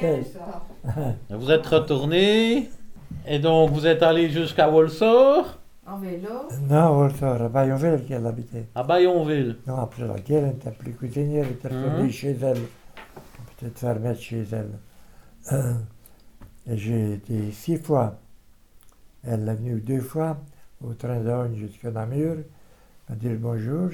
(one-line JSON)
{"title": "Mettet, Belgique - Robert Cheverier", "date": "2016-11-26 17:00:00", "description": "Robert Cheverier was a fighter in the Saint-Heribert bunker. In may 1940, german fighters won the battle, the belgian fighters were deported in Dresden. Robert Cheverier talks about his life inside the bunker and the deportation. He's 95 years old and deaf, so we have to speak very loudly as to be understood.\nFrançoise Legros is the owner of the Saint-Héribert bunker in Wepion village. Robert Cheverier is the last alive fighter of the Saint-Héribert underground bunker.", "latitude": "50.33", "longitude": "4.65", "altitude": "221", "timezone": "Europe/Brussels"}